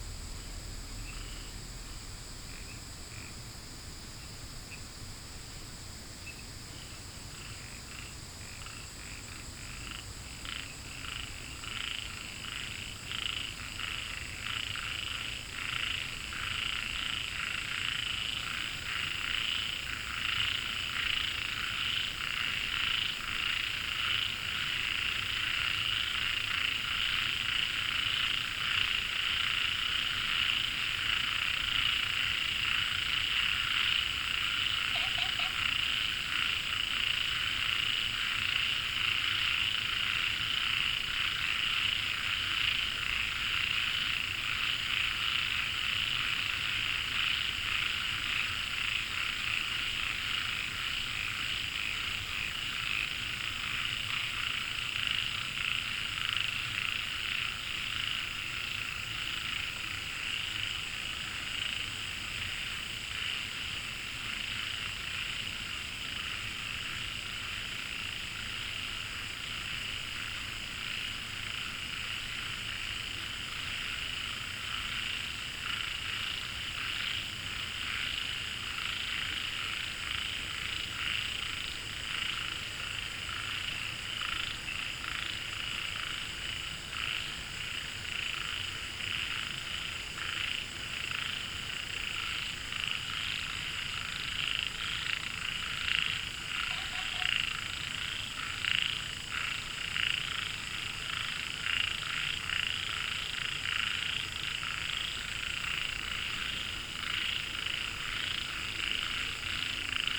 茅埔坑溼地, 南投縣埔里鎮桃米里 - Frogs chirping
Frogs chirping, In Wetland Park
Nantou County, Puli Township, 桃米巷11-3號, 11 August 2015, ~20:00